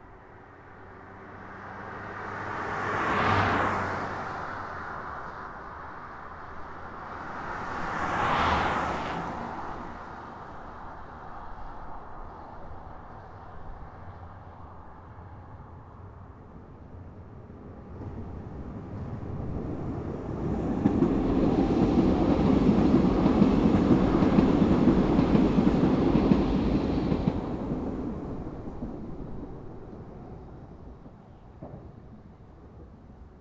lietzow: b 96 - the city, the country & me: federal highway
cars, trucks, passenger and freight train passing by
the city, the country & me: march 5, 2013
Vorpommern-Rügen, Mecklenburg-Vorpommern, Deutschland, 5 March 2013